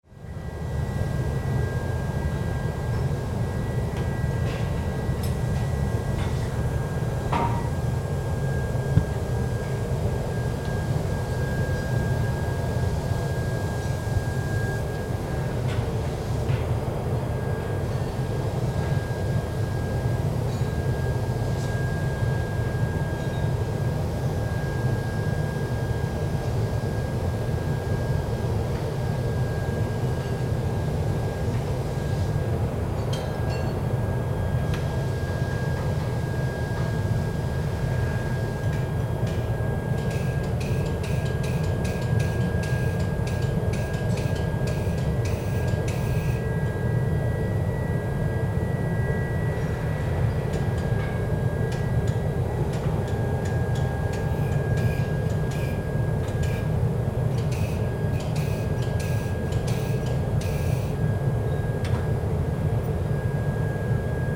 langenfeld, industriestrasse, stahl schmees
industrie - aufnahme in fabrik für stahlerzeugung, schmees - hier generelle atmo
soundmap nrw/ sound in public spaces - in & outdoor nearfield recordings